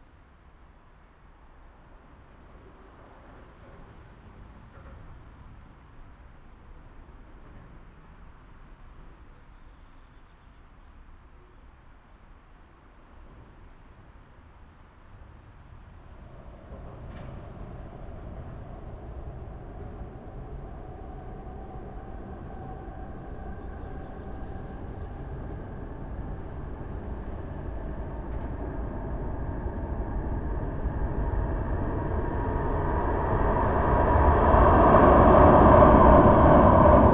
Bonn, Alemania - The Bridge
Recorded under the edge between concrete and metal parts of the brigde.